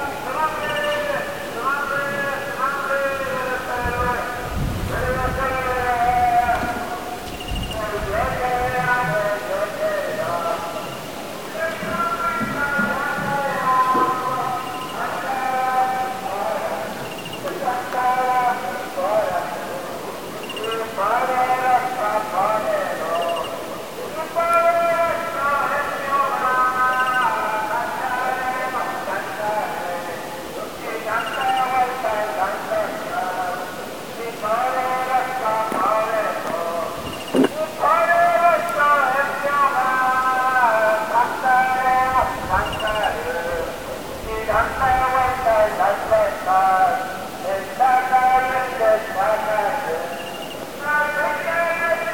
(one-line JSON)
{"title": "Huancayo. Misa en la distancia.", "date": "2011-08-23 20:32:00", "description": "Soundscape bye acm", "latitude": "-12.02", "longitude": "-75.18", "altitude": "3409", "timezone": "America/Lima"}